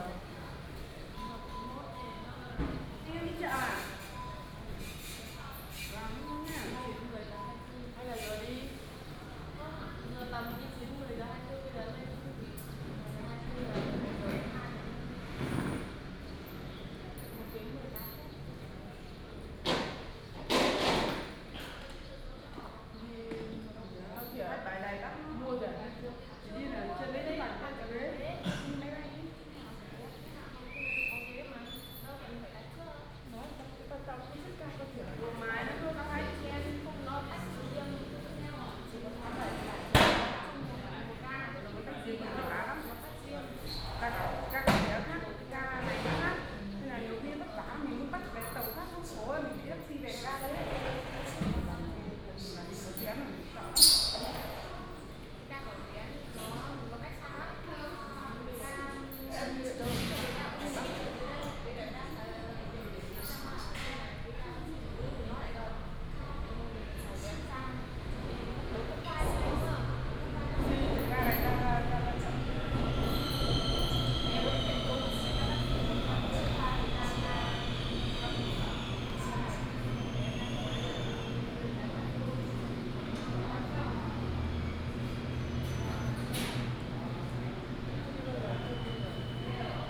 In the station hall, lunar New Year
Binaural recordings, Sony PCM D100+ Soundman OKM II